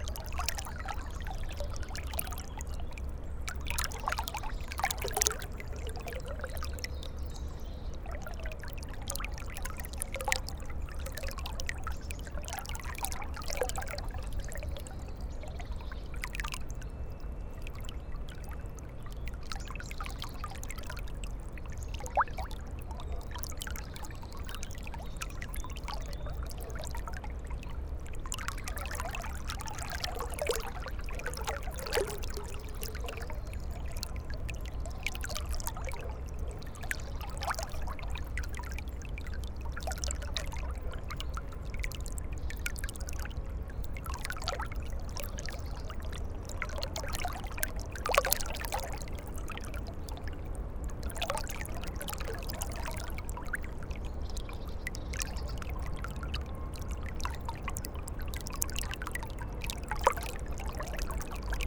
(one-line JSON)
{"title": "Saint-Pierre-lès-Elbeuf, France - Eure confluence", "date": "2016-09-19 15:00:00", "description": "The Eure river confluence, going into the Seine river. It's a quiet place, contrary to Elbeuf city.", "latitude": "49.29", "longitude": "1.04", "altitude": "4", "timezone": "Europe/Paris"}